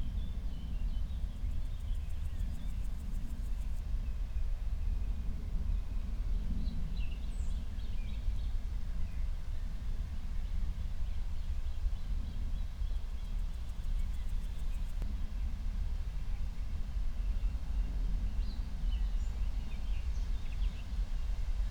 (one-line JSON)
{"title": "Berlin, Buch, Mittelbruch / Torfstich - wetland, nature reserve", "date": "2020-06-19 13:00:00", "description": "13:00 Berlin, Buch, Mittelbruch / Torfstich 1", "latitude": "52.65", "longitude": "13.50", "altitude": "55", "timezone": "Europe/Berlin"}